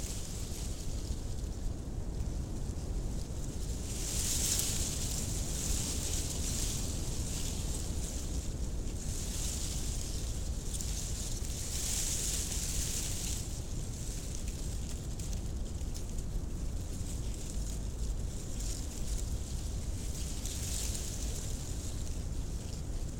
Galeliai, Lithuania, dried grass
very strong wind. microphones hidden in the dried grass.